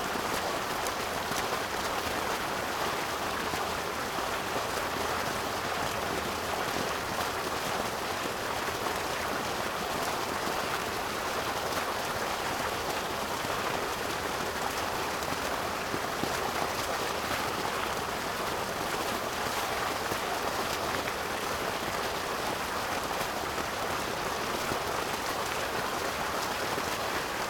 Parc Muncipal, Esch-sur-Alzette, Luxemburg - waterfall fountain
artificial waterfall fountain closeup
(Sony PCM D50)